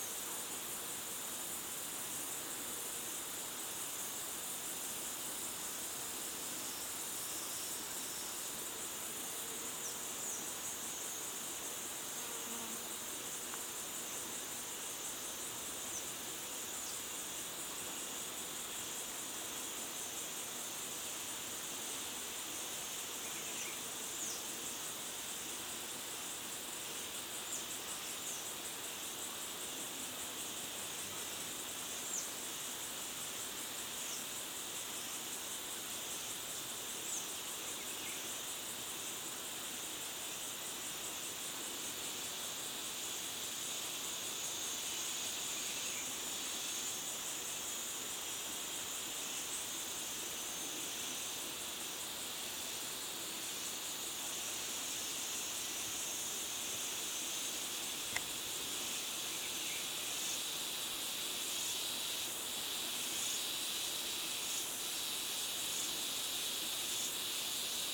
calm and monotone ambience at the border of the forest, São Sebastião da Grama - SP, Brasil - calm and monotone ambience at the border of the forest with intensification of insects in the ending
Arquivo sonoro de um vídeo que fiz enquanto gravava um macaco sauá no alto de uma embaúba.